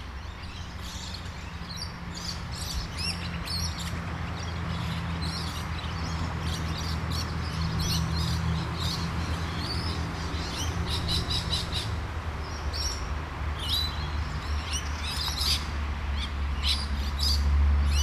Mitchelton, Brisbane. Down by the Creekbed.
Late afternoon, bird calls, running creek water, cars in nearby street.
Everton Park QLD, Australia, 11 July 2010